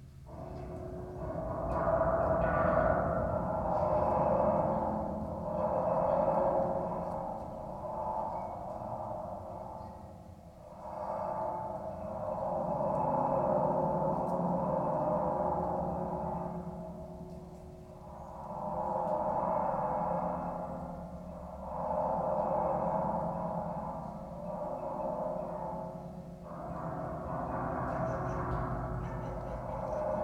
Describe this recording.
playing a wire fence in Macka park.